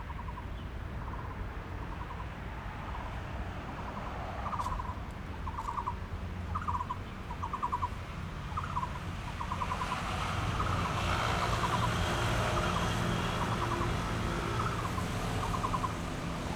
{"title": "Zuoying - bird sound", "date": "2012-03-03 15:05:00", "description": "In the park, bird sound, Rode NT4+Zoom H4n", "latitude": "22.68", "longitude": "120.29", "altitude": "14", "timezone": "Asia/Taipei"}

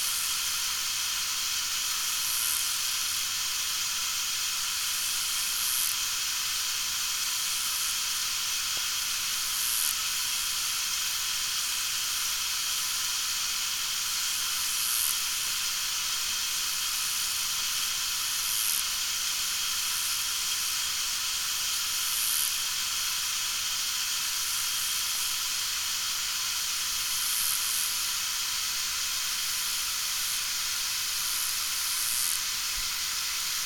{"title": "River Drava, Maribor, Slovenia - bridge fountain from underwater", "date": "2012-06-14 22:05:00", "description": "hydrophone recording of underwater life in the river drava, accompanied by fountains that spray water into the river from the old bridge.", "latitude": "46.56", "longitude": "15.65", "altitude": "261", "timezone": "Europe/Ljubljana"}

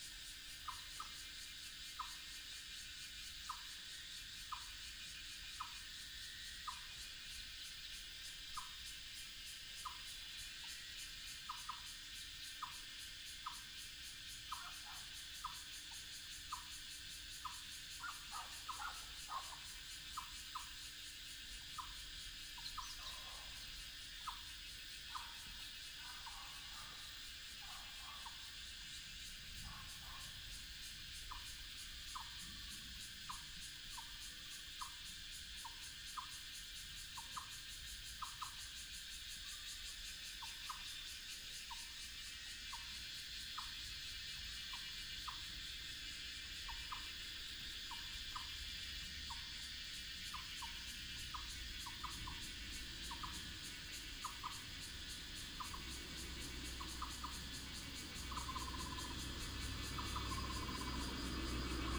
{"title": "東湖, 大溪區環湖路一段 - Bird and Cicada", "date": "2017-08-09 17:48:00", "description": "Bird call, Cicada cry, Traffic sound", "latitude": "24.82", "longitude": "121.31", "altitude": "252", "timezone": "Asia/Taipei"}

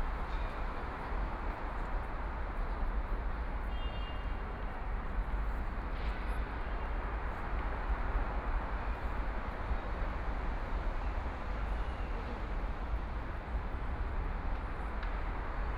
{"title": "Wujiaochang, Yangpu District - Sunken plaza", "date": "2013-11-21 18:00:00", "description": "in the Sunken plaza, There are many people coming and going on the square, Traffic noise above the Square, Binaural recording, Zoom H6+ Soundman OKM II", "latitude": "31.30", "longitude": "121.51", "altitude": "7", "timezone": "Asia/Shanghai"}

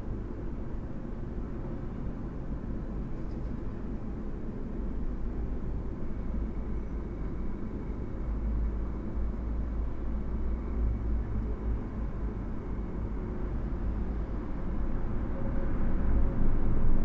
{"title": "Old drain tunnel, sub-street sounds Łódź, Poland - Old drain tunnel, sub-street sounds ?ód?, Poland", "date": "2012-04-05 11:40:00", "description": "sounds below the street in an old drain tunnel. recorded during a sound workshop organized by the Museum Sztuki of Lodz", "latitude": "51.78", "longitude": "19.45", "altitude": "209", "timezone": "Europe/Warsaw"}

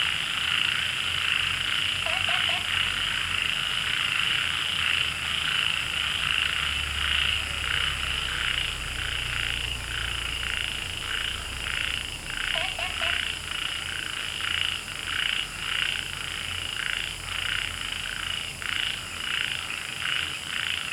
{"title": "茅埔坑溼地, 南投縣埔里鎮桃米里 - Frogs chirping", "date": "2015-08-10 20:04:00", "description": "Frogs chirping, Insects sounds, Wetland\nZoom H2n MS+ XY", "latitude": "23.94", "longitude": "120.94", "altitude": "470", "timezone": "Asia/Taipei"}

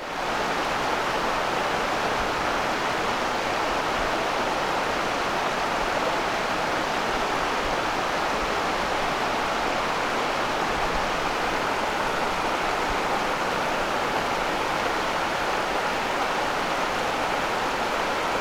{"title": "Crete, Samaria Gorge - stream", "date": "2012-09-29 14:03:00", "description": "one of the brooks in Samaria Gorge, recorded from a path above. perfect, grainy noise", "latitude": "35.25", "longitude": "23.97", "altitude": "401", "timezone": "Europe/Athens"}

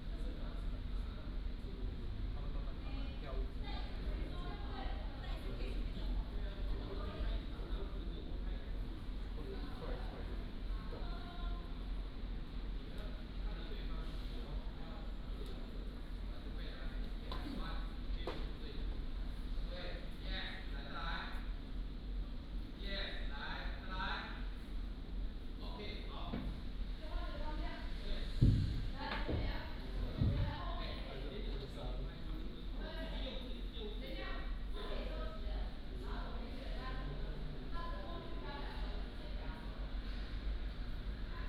{"title": "鹿港龍山寺, Lukang Township, Changhua County - Walking in the temple", "date": "2017-02-15 11:47:00", "description": "Walking in the temple", "latitude": "24.05", "longitude": "120.44", "altitude": "12", "timezone": "Asia/Taipei"}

